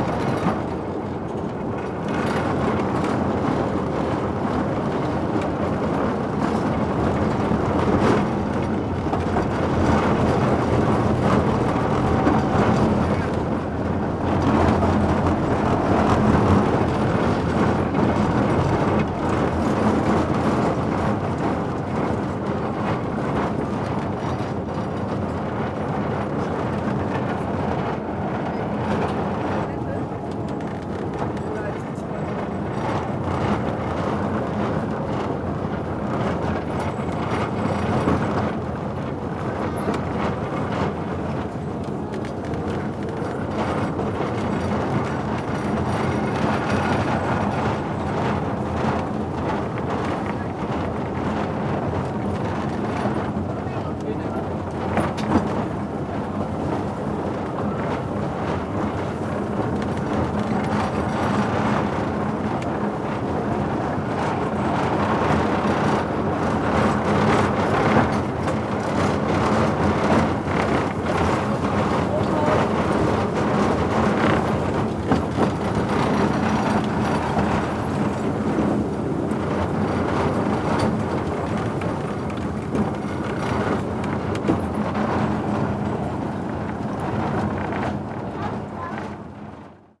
ride on a small train, through the derelict Spreepark area, along the rusty ferries wheel, rotten buildings and overgrown fun fair grounds.
(Sony PCM D50 120°)
Berlin, Germany, 10 June